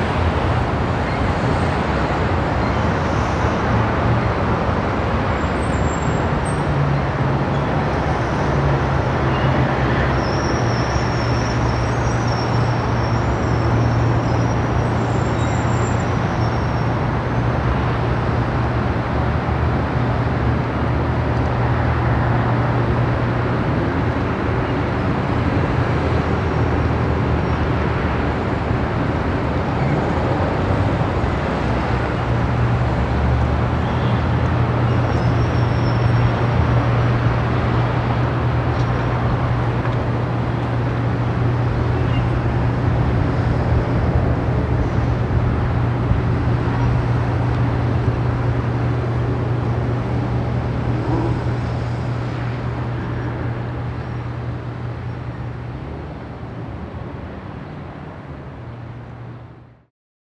afternoon traffic at frankfurt city near the fair
soundmap d - social ambiences and topographic field recordings
Frankfurt, Germany